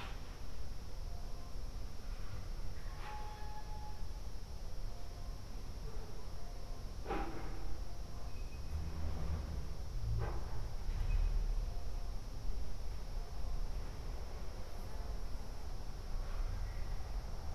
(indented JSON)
{
  "title": "Ascolto il tuo cuore, città, I listen to your heart, city. Several chapters **SCROLL DOWN FOR ALL RECORDINGS** - Terrace August 10th afternoon in the time of COVID19 Soundscape",
  "date": "2020-08-10 14:41:00",
  "description": "\"Terrace August 10th afternoon in the time of COVID19\" Soundscape\nChapter CXXIV of Ascolto il tuo cuore, città. I listen to your heart, city\nMonday, August 10th, 2020. Fixed position on an internal terrace at San Salvario district Turin five months after the first soundwalk (March 10th) during the night of closure by the law of all the public places due to the epidemic of COVID19.\nStart at 2:41 p.m. end at 3:12 p.m. duration of recording 30'49''",
  "latitude": "45.06",
  "longitude": "7.69",
  "altitude": "245",
  "timezone": "Europe/Rome"
}